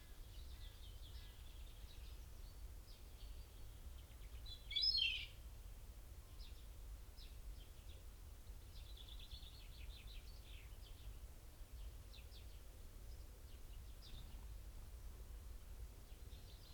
Chaffinch song and call soundscape ... recorded with binaural dummy head to Sony minidisk ... bird song ... and calls from ...tree sparrow ... dunnock ... robin ... longtail tit ... wood pigeon ... stock dove ... great tit ... blue tit ... coal tit ... rook ... crow ... plus background noise ... traffic ...